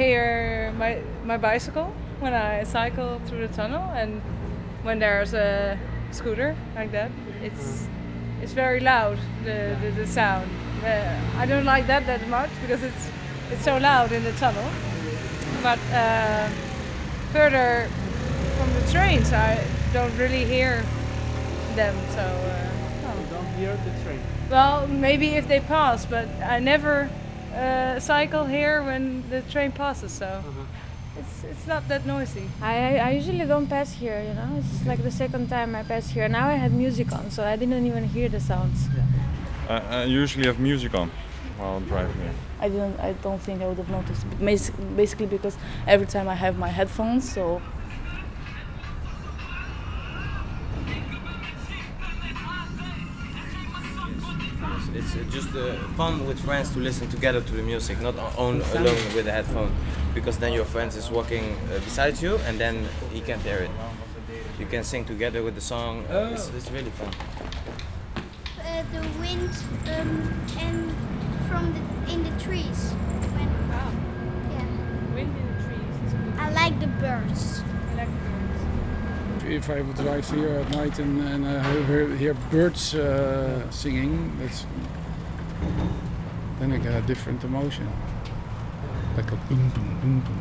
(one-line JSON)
{"title": "Schenkkade, Binckhorst, Den Haag - TL-Interviews#2, Binckhorst. Den Haag", "date": "2012-05-25 12:51:00", "description": "Interviews about surrounding sounds on Schenkviaduct. Binckhorst. Den Haag", "latitude": "52.08", "longitude": "4.33", "altitude": "1", "timezone": "Europe/Amsterdam"}